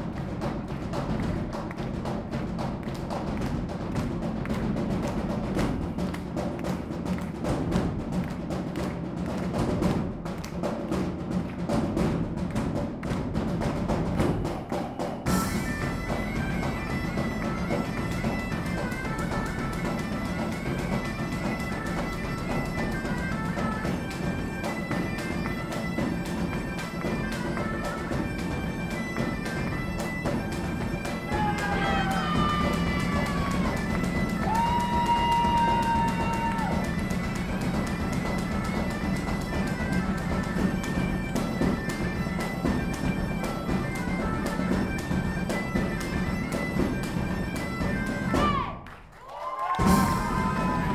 Dětenice, Czechia, in the tavern
a band playing in the Detenice tavern
13 August 2017